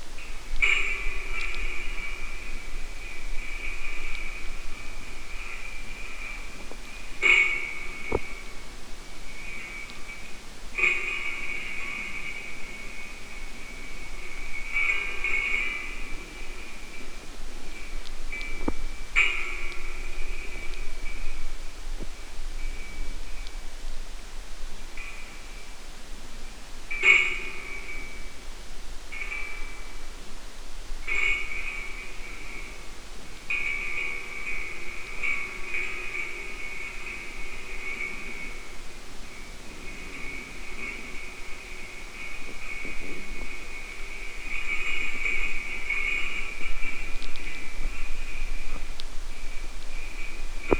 incandescent filament...tungsten filament in a failed 60W light bulb...